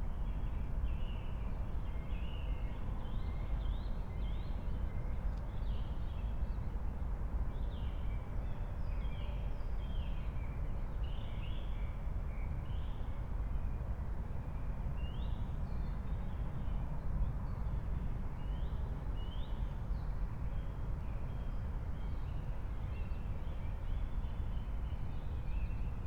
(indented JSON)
{
  "date": "2021-05-03 04:30:00",
  "description": "04:30 Berlin, Königsheide, Teich - pond ambience",
  "latitude": "52.45",
  "longitude": "13.49",
  "altitude": "38",
  "timezone": "Europe/Berlin"
}